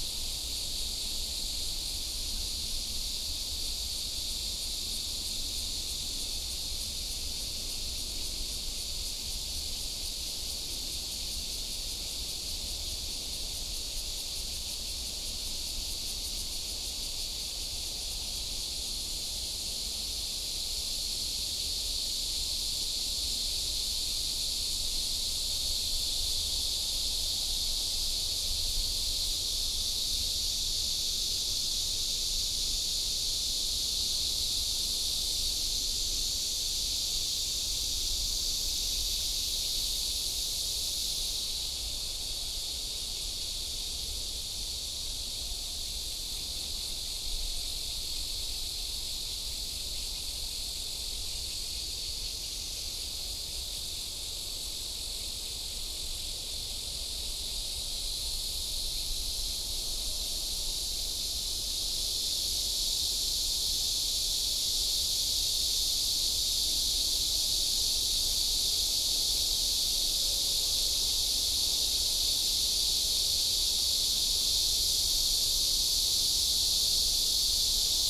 永光里興安宮, Zhongli Dist. - Cicada cry
Next to the temple, Cicada cry, traffic sound, The sound of the distant highway